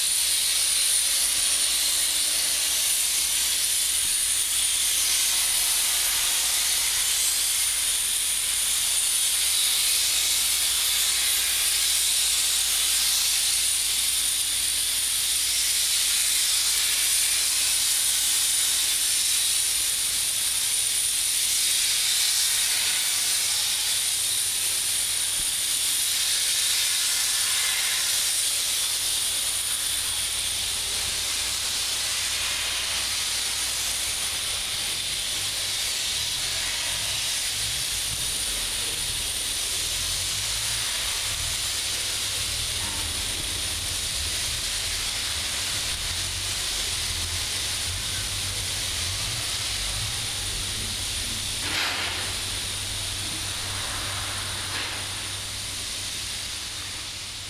Hansaviertel, Berlin, Deutschland - sandblasting fronts
Berlin, Germany, 14 April 2016